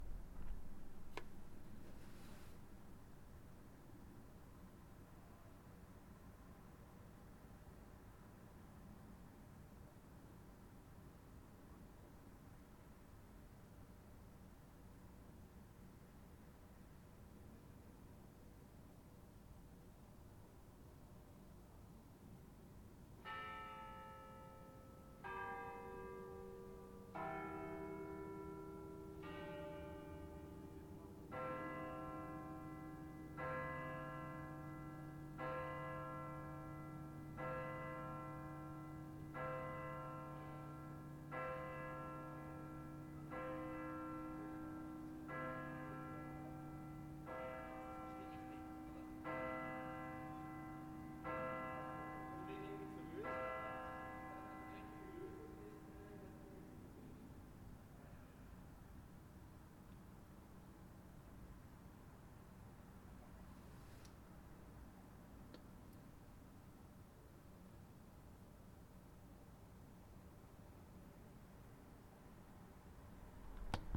Sedanstraße, Regensburg, Deutschland - Mitternacht in Regensburg
Mitternachtsgeläut der St. Cäcilia Kirche im Ostenviertel in Regensburg.
Oberpfalz, Bayern, Deutschland, 28 December